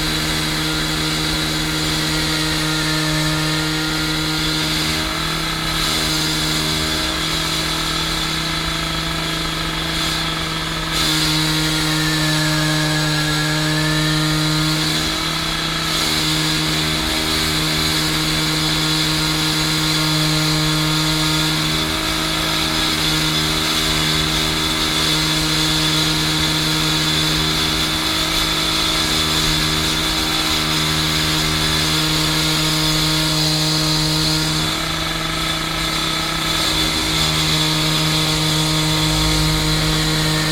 refrath, steinbreche, strassenbau
bauarbeiter und maschine zum durchsägen des strassenasphalts, morgens
soundmap nrw - social ambiences - sound in public spaces - in & outdoor nearfield recordings